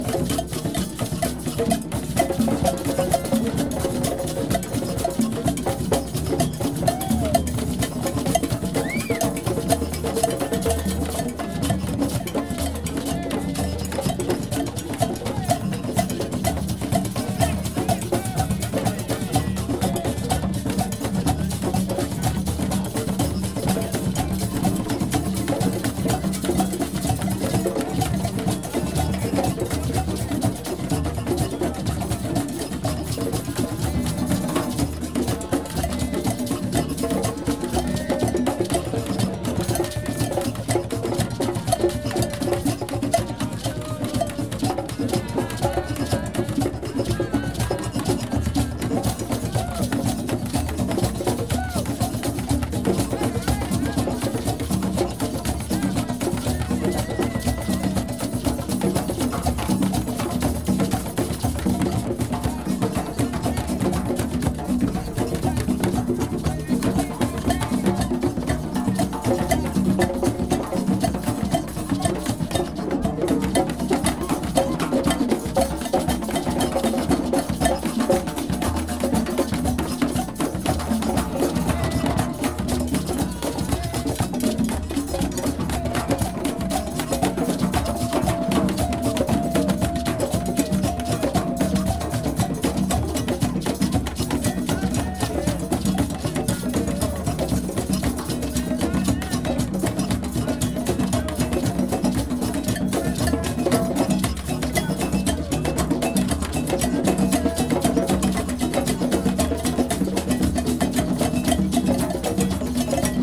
Ville-Marie, Montreal, QC, Canada - Famous tam-tam sunday at Mont-Royal
Famous tam-tam sunday at Mont-Royal
REC: Zoon H4N
Montréal, QC, Canada, 22 May, ~03:00